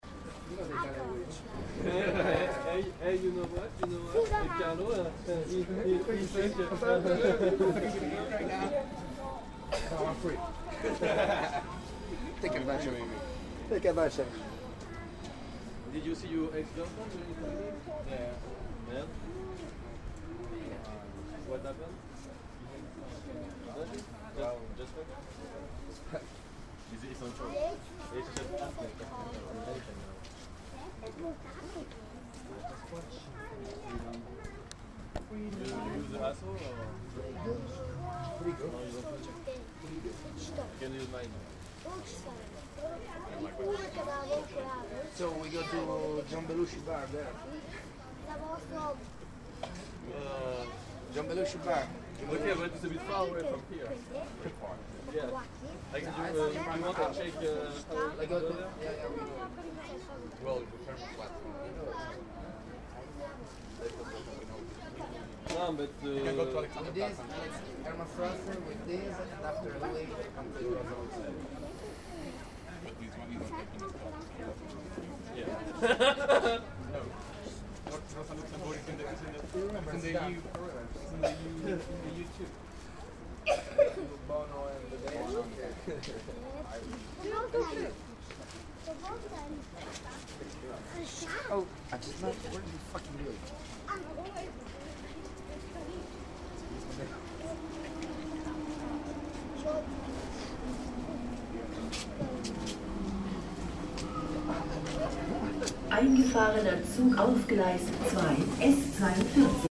Wilmersdorf, Berlin, Deutschland - Track
S-Bahn-stop "Heidelberger Platz". "h2 handyrecorder".